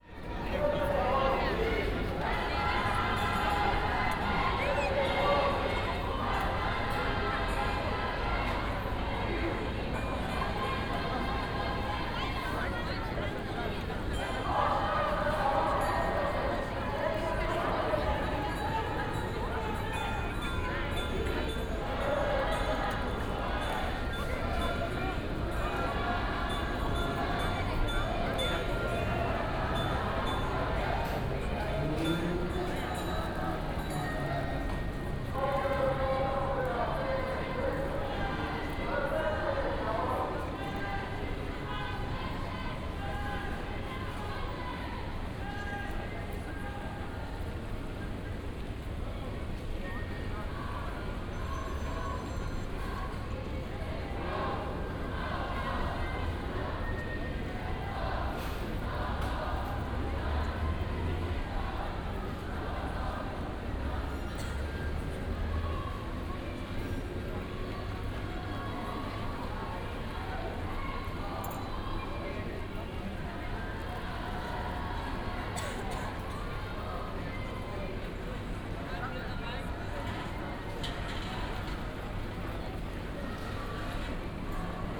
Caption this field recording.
a demonstration of kurdish and yezidish people starts at Williy-Brandt-Platz, Essen, (Sony PCM D50, OKM2)